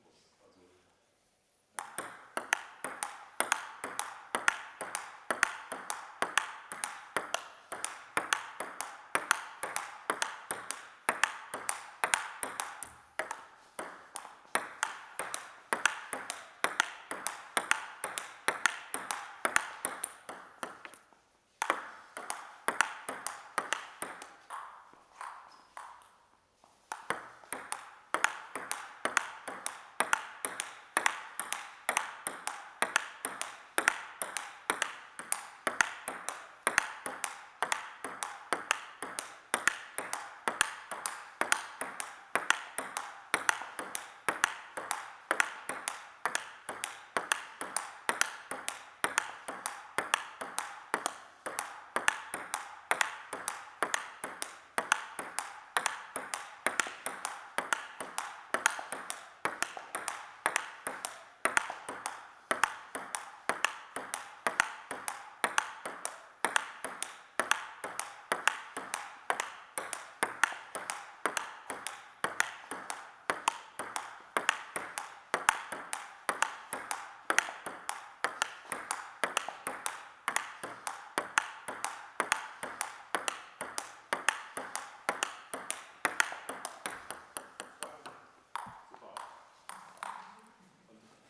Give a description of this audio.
Table tennis training. We miss it in lockdown ...